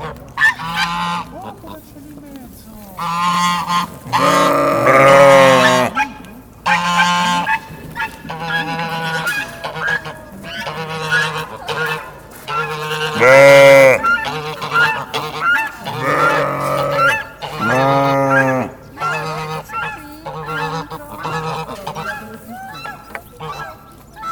Via 1° Maggio, Bernate VA, Italia - Animali in cascina